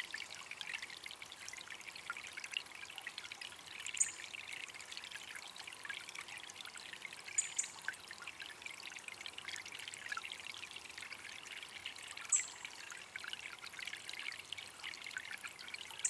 Marknesse, Nederland - Soundscape of a hydrological laboratory.
Recording made at the hydrological laboratory
Background:
Water is very important for living organisms, but it can also pose a threat, such as the rise in sea level due to global warming.
For centuries now, there has been a special relationship between the Dutch and the water. The polders that have been reclaimed from the sea are world famous, but the storm surge barriers are at least as extraordinary. The Delta Works and Afsluitdijk, for instance, which the Dutch built to protect them from the water. They built Holland as we know it today with great knowledge and perseverance. As a result, Holland is internationally renowned as the world’s laboratory in terms of water management.
Trial garden
Testing was indispensable to obtain the required knowledge. The Waterloopkundig Laboratorium, a hydrological laboratory, was established in Noordoostpolder after WWII, in the pre-computer age.
Noordoostpolder, Flevoland, Nederland, 18 October 2021